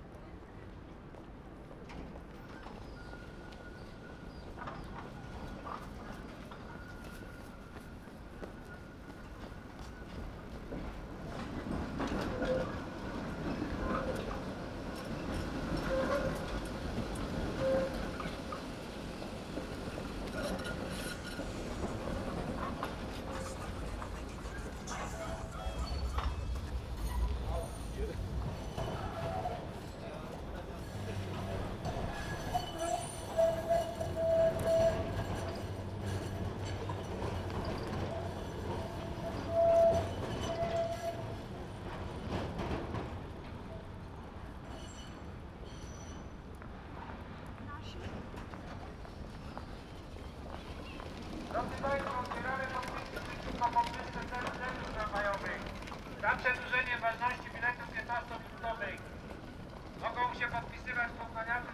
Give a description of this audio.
sounds of passing trams, people going in all directions and a few words protesting against price increase of public transportation tickets